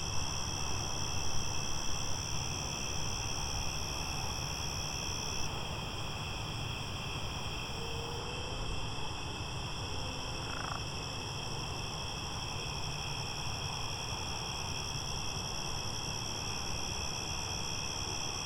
The Centrum, Austin, TX, USA - frogs in creek
Frogs croaking in a creek at night. Recorded with a Tascam DR40.